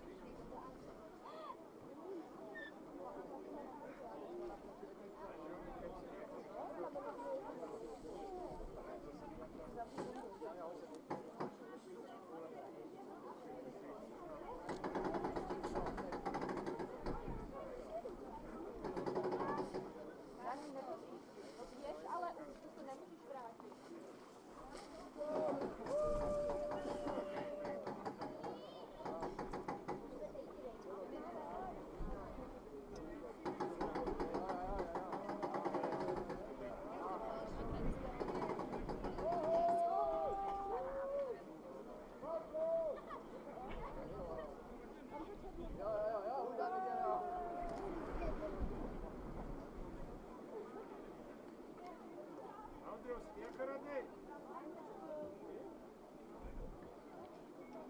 Snezka mountain, Czechia, from the grass perspective
15 August, 12:20